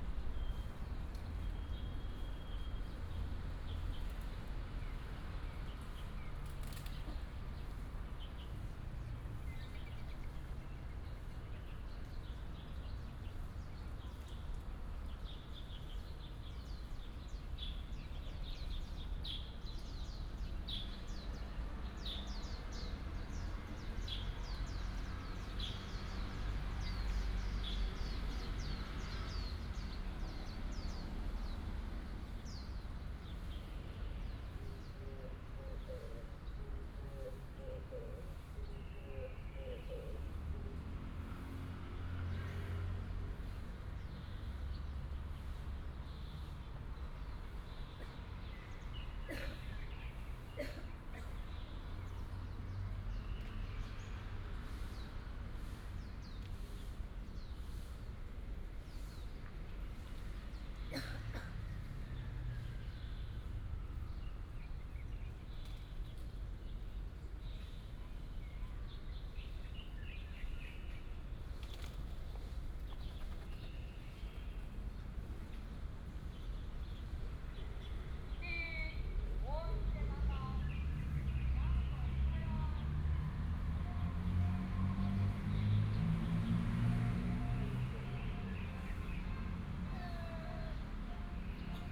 忠孝公園, Hsinchu City - in the park
Several kinds of birds sounded, in the park, Birds call, Healthy gymnastics, Binaural recordings, Sony PCM D100+ Soundman OKM II
21 September 2017, 06:07